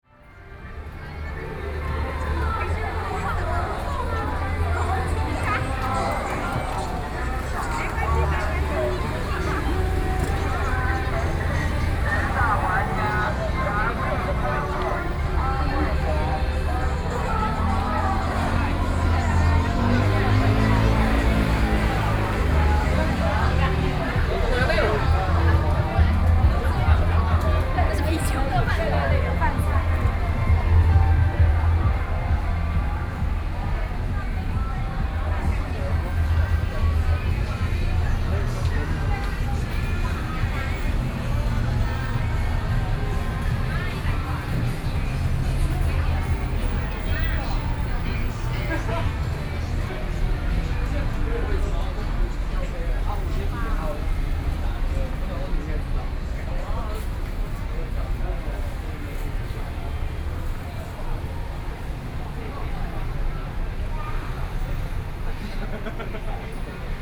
興東路, 羅東鎮賢文里 - walking on the Road
walking on the Road, Various shops voices, Traffic Sound